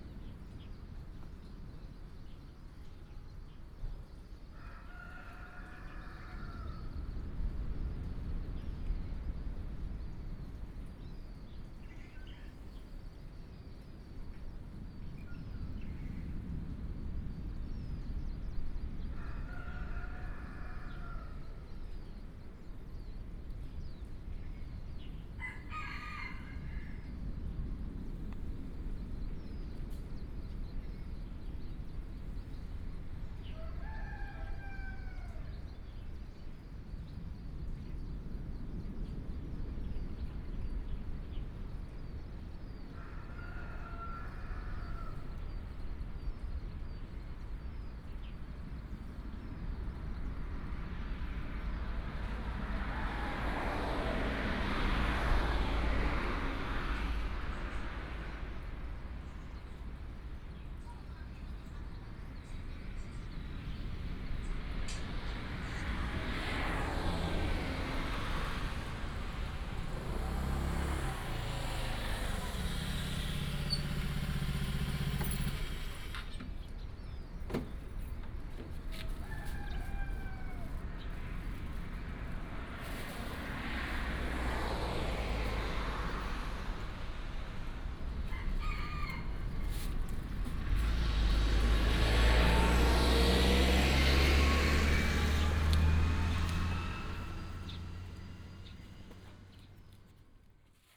全家便利商店台東大竹店, Dawu Township - Parking in the convenience store
Parking in the convenience store, Chicken crowing, Traffic sound
Taitung County, Taiwan, 2 April